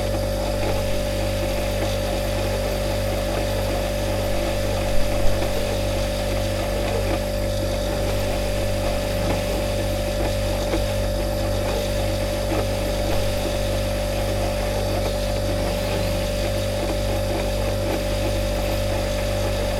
Sasino, summerhouse at Malinowa Road, kitchen - appliances
kitchen appliances in operation - fridge compressor, coffee machine, inductive stove (sony d50)
Poland, May 2016